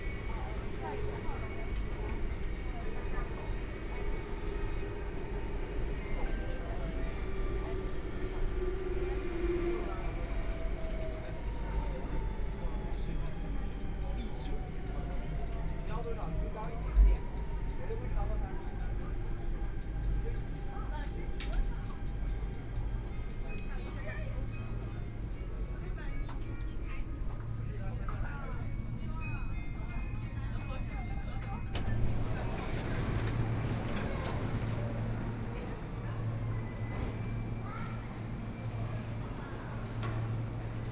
walking in the Yuyuan Garden station, from Yuyuan Garden Station to East Nanjing Road Station, Binaural recording, Zoom H6+ Soundman OKM II